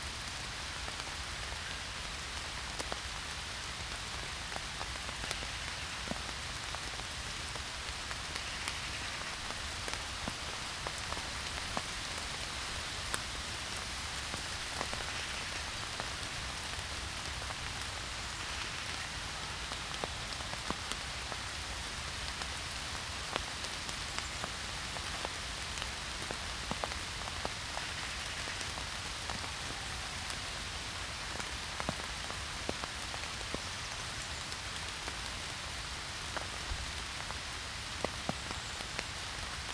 Howwell wood, Hemsworth, UK - Rain in forest
Recorded with ZOOM H1 under an umbrella and a yew tree in the rain.